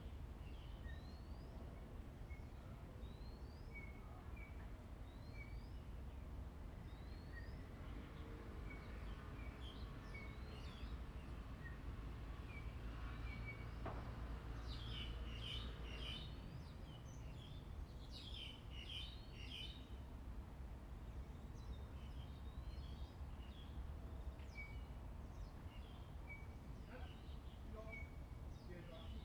in the Park, Birds singing, In the woods
Zoom H2n MS +XY